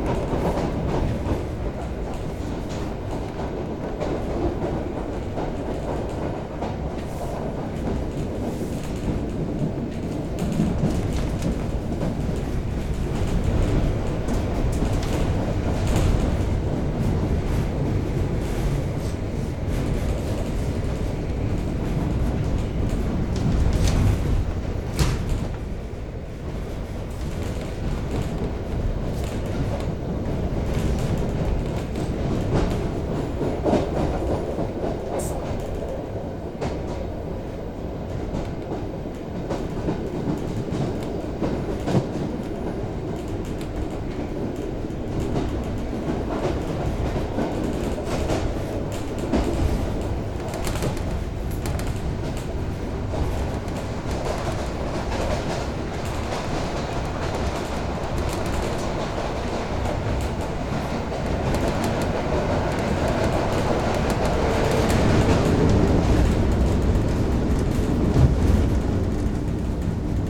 Olsztyn, Pociąg - Inside train passing through Olsztyn
Short trip from Central Station to West Station inside Olsztyn city.